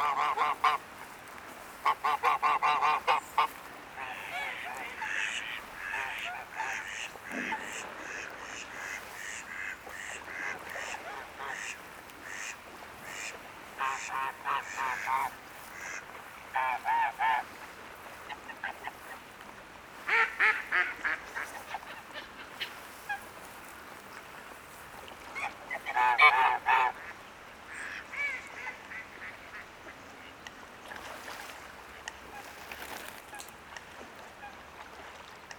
Seneffe, Belgium - Very quiet ambiance at the canal
Near an old and disused canal, birds are searching food in the water : Common Moorhen, Eurasian Coot, Mallard, unhappy geese. Ambiance is very very quiet during the winter beginning.